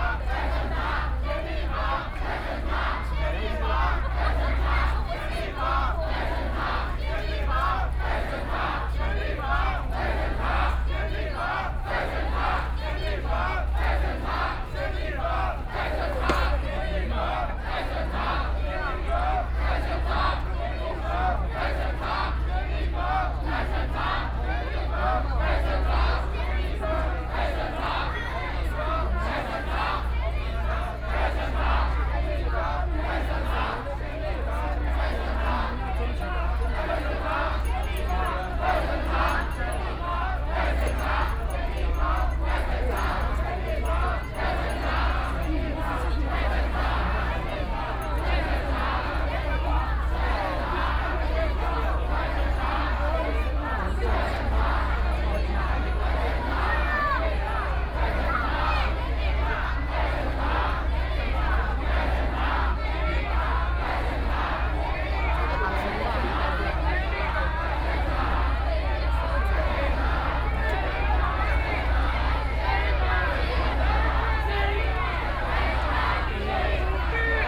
Tamsui District, New Taipei City - Tourists and protest
A lot of tourists, Protest crowd walking through
Please turn up the volume a little. Binaural recordings, Sony PCM D100+ Soundman OKM II